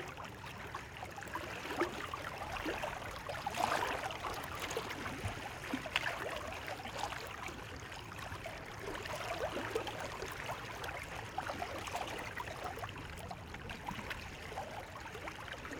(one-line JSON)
{"title": "Zürich, Rote Fabrik, Schweiz - Seeufer", "date": "2004-01-29 22:52:00", "latitude": "47.34", "longitude": "8.54", "altitude": "406", "timezone": "GMT+1"}